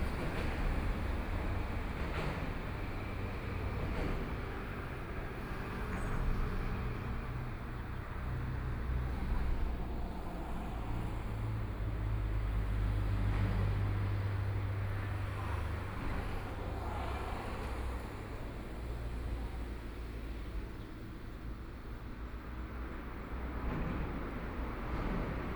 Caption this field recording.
Birdsong sound, Cicadas sound, Traffic Sound, Trains traveling through